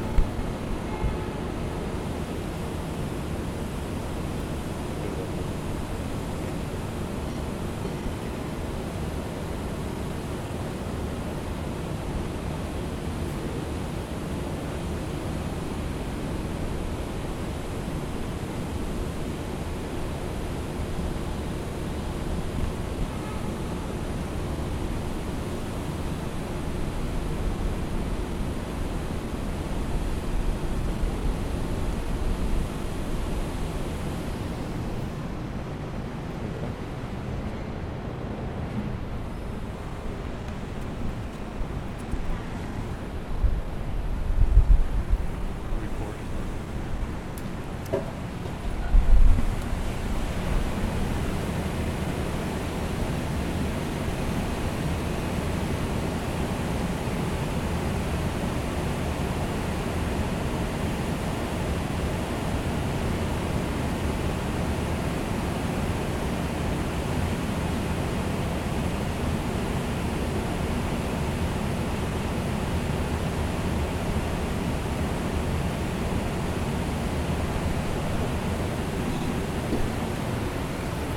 Field recording from the 6th floor garden of a financial district building.
CA, USA